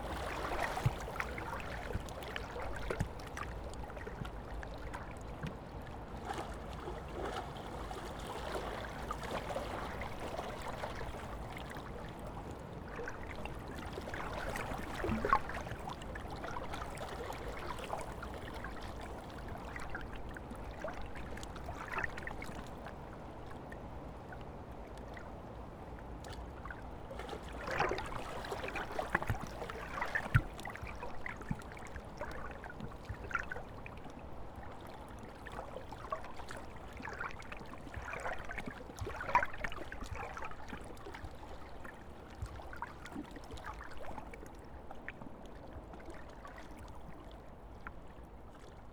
Vltava river ripples amongst stones, Prague, Czechia - Vltava river ripples heard slowly crossfading from above to below water
With normal ears it is rather difficult to hear the river Vltava at Braník as traffic noise from the autobahn on the opposite bank continuously drowns out most smaller sounds including water ripples, rowing boats and kayaks. However at some spots mini waves breaking on stones at the river's edge are audible. This track was recorded simultaneously above (normal mics) and below water level (a hydrophone). At the start ripples and traffic noise are heard, which slowly crossfades into the gloopy, slopy underwater world, where the traffic is no longer audible.